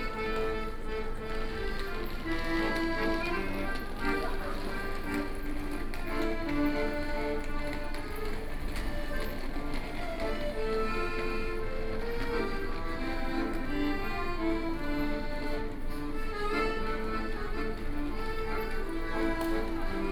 Chiang Kai-Shek Memorial Hall Station, Taipei - MRT Station
Accordion artists, Sony PCM D50 + Soundman OKM II